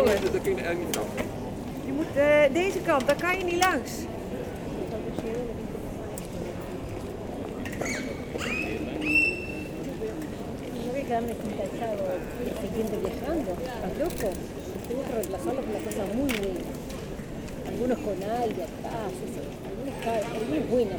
Maastricht, Pays-Bas - Onze-Lieve-Vrouw church
In front of the Onze-Lieve-Vrouw church, it's a cobblestones square. People are discussing quietly. I'm entering in the church and in the chapel, the door grinds, and after I go out. A touristic group is guided. At twelve, the bell is ringing angelus.
Maastricht, Netherlands, 20 October 2018, 12:00